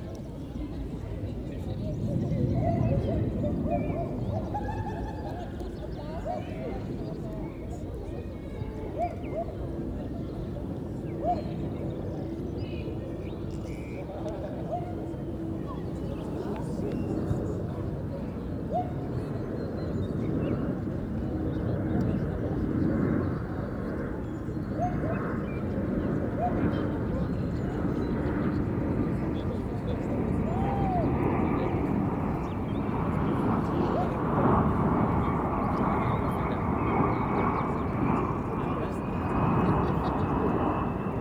Covid-19 has closed Berlin schools and the springtime weather is beautiful. Kids are relaxing in the parks, enjoying the sunshine, sitting around in small and large groups, playing ball games, dancing to musics on their phones, sharing jokes, drinks and maybe even viruses. Amazing how Berliners are so good at turning a crisis into a party.
The pandemic is also having a noticeable effect on the city's soundscape. This spot is directly under the flight path into Tegel airport. Normally planes pass every 3 or 4 minutes. Now it's about 10 minutes. Traffic is less. The improvement in sonic clarity and distance hearing is very pleasant.